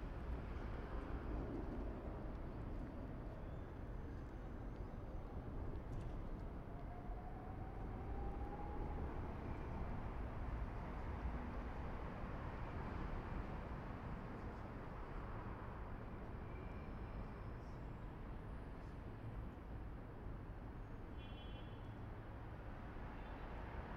John Islip St, Westminster, London, UK - Street Ambience - Parade Ground, Millbank
Recording street ambience in Chelsea College of Art parade ground using ambisonic microphone by reynolds microphones